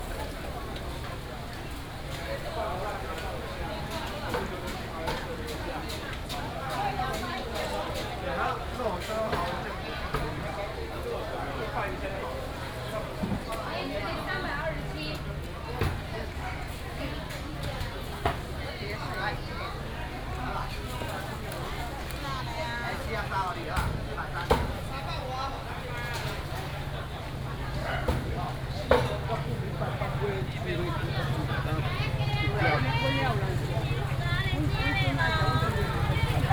民享街, Banqiao Dist., New Taipei City - Traditional market
Traditional market, vendors peddling, traffic sound
New Taipei City, Taiwan, 25 August 2017, ~09:00